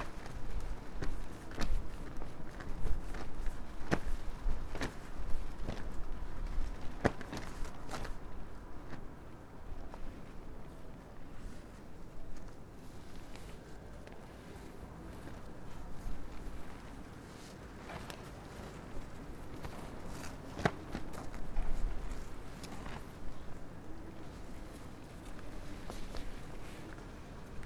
berlin: friedelstraße - the city, the country & me: motorbike cover
motorbike cover flapping in the wind
the city, the country & me: february 1, 2013
Berlin, Deutschland, European Union, 1 February 2013, 02:54